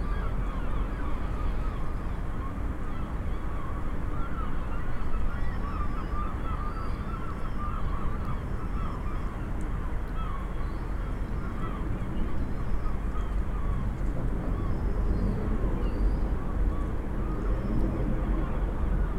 Köln, Deutz harbour, closing time harbour ambience between scrapyard and flour mill, excited gulls and a passing-by train.
(Sony PCM D50, DPA4060)
Cologne, Germany, 13 August 2013